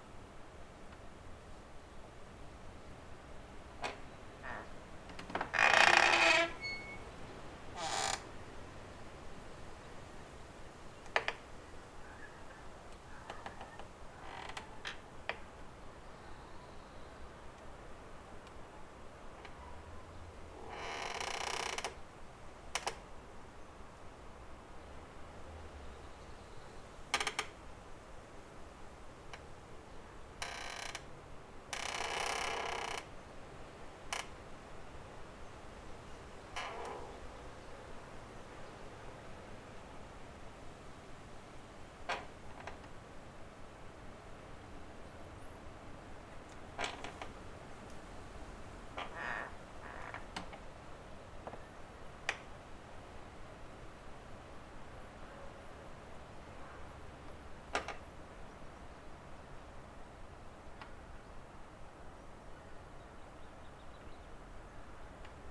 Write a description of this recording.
A recording of an abandoned building doorway, listening from the inside out. Soft wind and distant city hum is interrupted by a cracking door. Recorded with ZOOM H5.